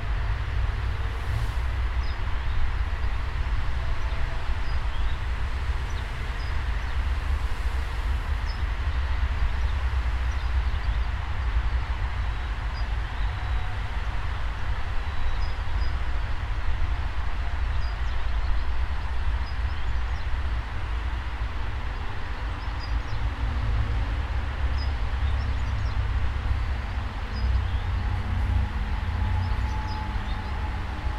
Tempelhof, Berlin, Germany - Tempelhofer Feld - An der Ringbahn
It's almost summer, early in the morning, the larks are very audible, also the traffic from the highway. Commuter trains passing by occasionally.